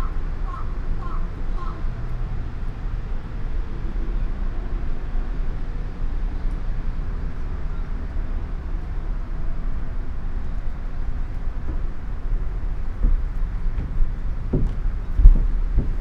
{"title": "garden Chishakuin, street side, Kyoto - gardens sonority", "date": "2014-11-01 11:45:00", "latitude": "34.99", "longitude": "135.78", "altitude": "58", "timezone": "Asia/Tokyo"}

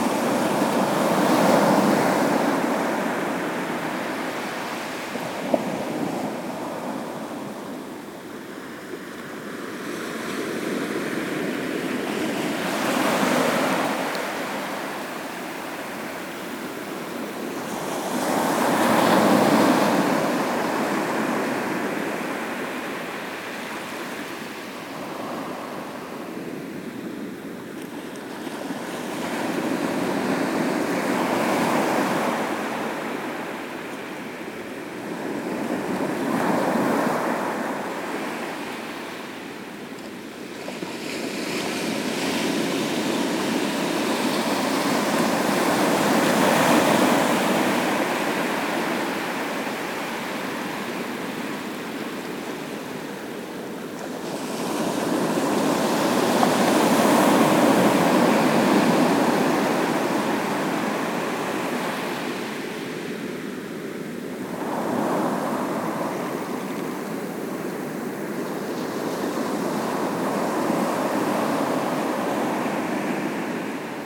{"title": "Scheveningen, Nederlands - The sea", "date": "2019-03-29 20:00:00", "description": "Scheveningen, the sea at Meijendel.", "latitude": "52.14", "longitude": "4.31", "altitude": "2", "timezone": "Europe/Amsterdam"}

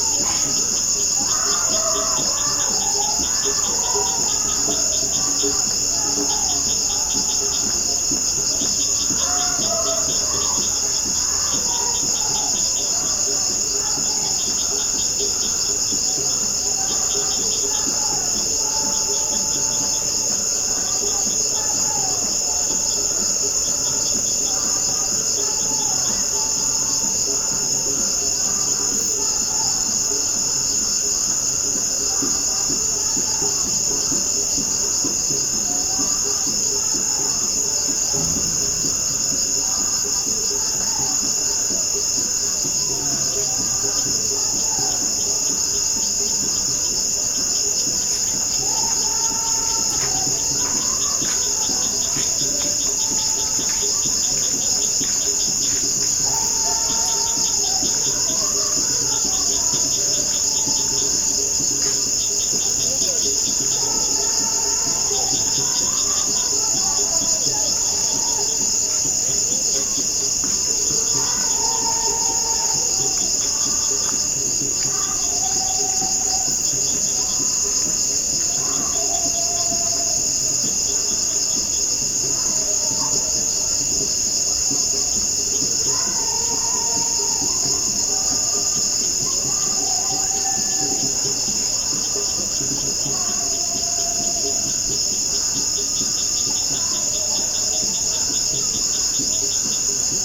{"title": "St. Gabriels School, Fontaine, Haiti - Fontaine Community Singing Heard from Rooftop at Night", "date": "2019-07-15 23:04:00", "description": "Fontaine is a hamlet roughly an hours walk or a 15 minute moto ride from Pignon, one city in the Nord Department in central Haiti. The recording was done via H2N from the rooftop of a two-story school, recording the sounds of the night, which predominately features a gathering of song, likely though not yet confirmed to be by members of the Voodoo community.", "latitude": "19.34", "longitude": "-72.07", "altitude": "375", "timezone": "America/Port-au-Prince"}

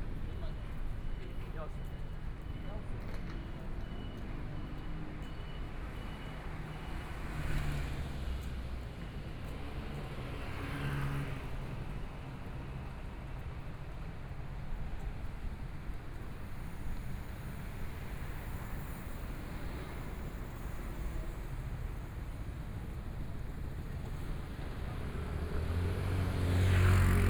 {"title": "Zhongyuan St., Zhongshan Dist. - walking in the Street", "date": "2014-01-20 14:25:00", "description": "walking in the Zhongyuan St., Traffic Sound, from Minquan E. Rd. to Nong'an St., Binaural recordings, Zoom H4n+ Soundman OKM II", "latitude": "25.06", "longitude": "121.53", "timezone": "Asia/Taipei"}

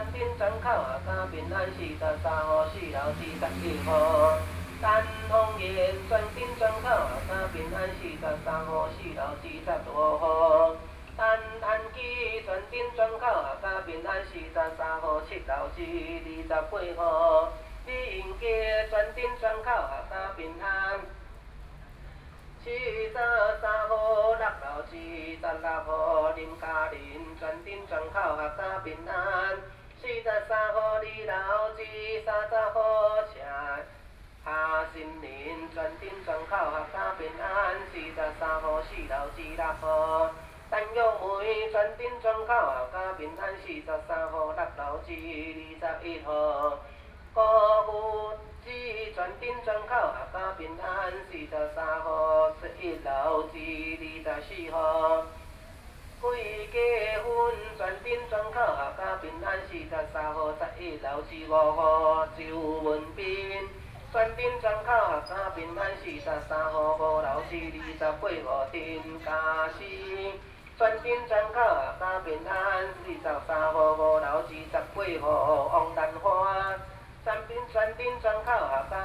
{"title": "Xinsheng N. Rd, Taipei City - Pudu", "date": "2013-08-17 15:10:00", "description": "Traditional Ceremony, Daoshi, Read singing the name of the household, Sony PCM D50 + Soundman OKM II", "latitude": "25.07", "longitude": "121.53", "altitude": "15", "timezone": "Asia/Taipei"}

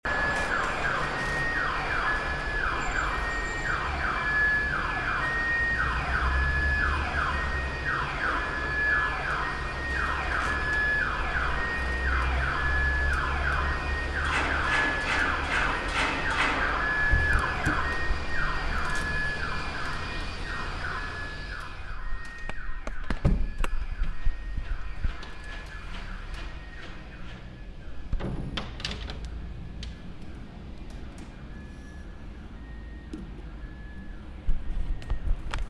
{"title": "Calea Victoriei 90", "date": "2011-11-16 02:54:00", "description": "street, boulevard, alarm, bucharest, romania", "latitude": "44.44", "longitude": "26.09", "altitude": "95", "timezone": "Europe/Bucharest"}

